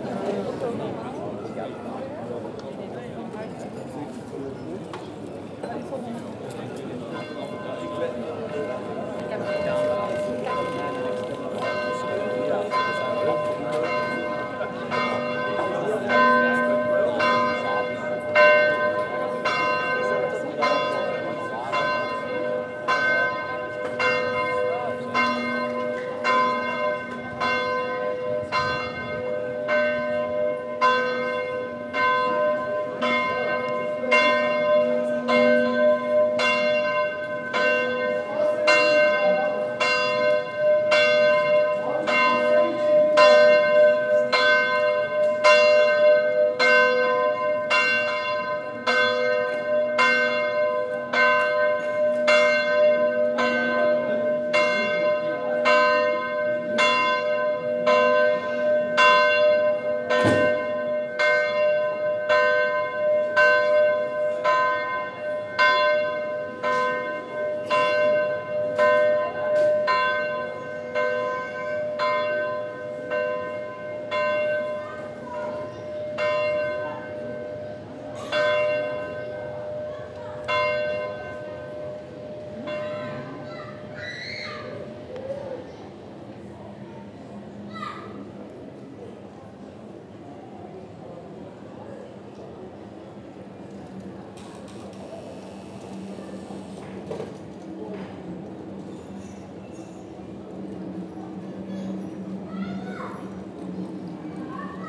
Mechelen, Belgique - Mechelen main square
Quiet discussions on the bar terraces, sun is shinning, very much wind, the bell is ringing twelve.